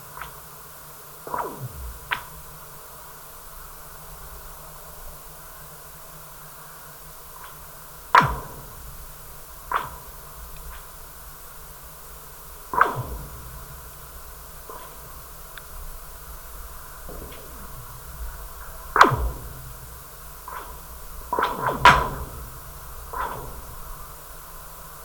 GJCR+HX Utena, Lietuva - Lake ice Andromeda
Utenos rajono savivaldybė, Utenos apskritis, Lietuva, January 2022